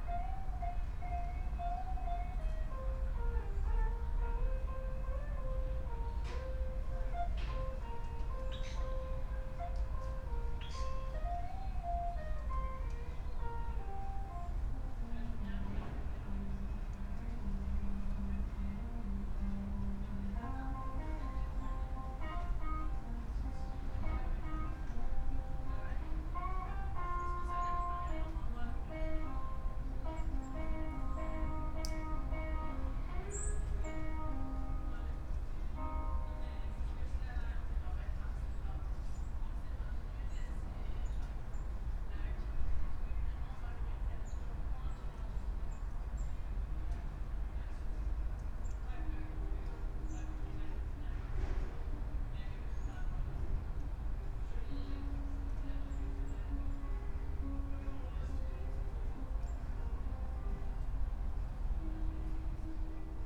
Berlin Bürknerstr., backyard window - guitar player
guitar player practising in the neighbourhood
(PMC D50, Primo DIY)